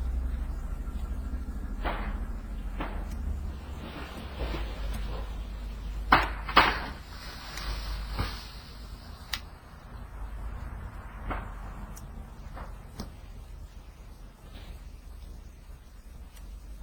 428 north grant ave, fort collins, co 80521
On the evening of October 25, 2011 Fort Collins Colorado experienced a strong fall snowstorm. The heavy wet snow resulted in an almost constanct sounds of snapping tree limbs, falling trees, and mini avalnches of snow falling from the trees.
Fort Collins, CO, USA